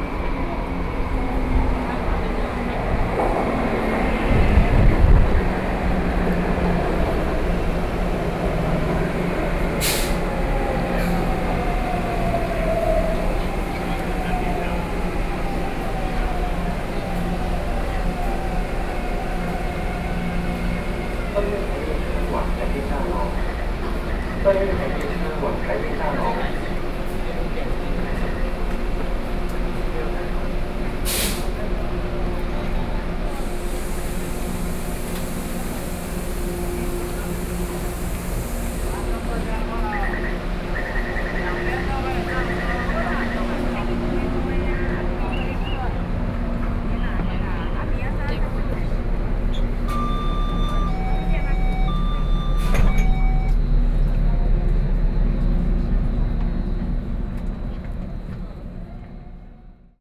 Minquan W. Rd. Station, Taipei City - waiting for the train
Minquan West Road Station, On the platform waiting for the train, Sony PCM D50 + Soundman OKM II
Zhongshan District, Taipei City, Taiwan, August 2013